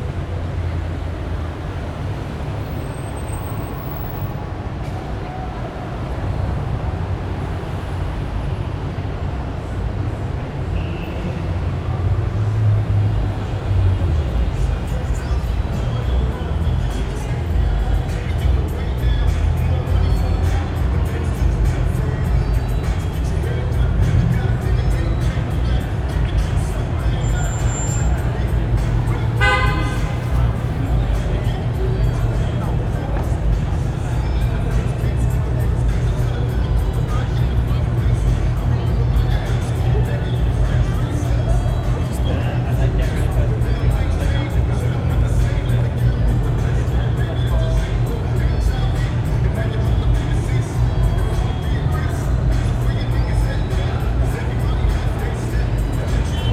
neoscenes: late night George Street